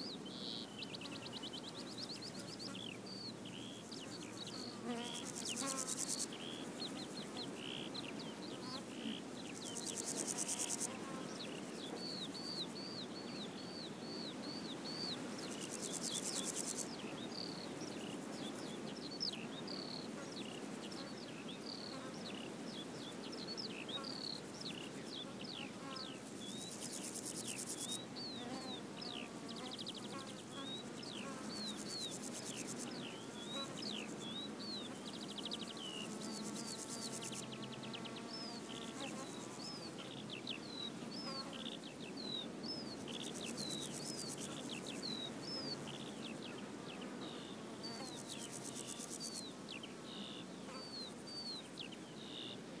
Above Chapmans Pool, S W Coast Path, Swanage, UK - Skylarks Grasshoppers and Bees
I set up the recorder behind a low stone wall, there was a strong wind blowing across the headland and the Skylarks were riding the breeze pouring out their beautiful songs above us. While on the ground there were a myriad of bees, flies and grasshoppers filling the gaps. Sony M10 using the built-in mics and homemade 'fluffy'.
England, United Kingdom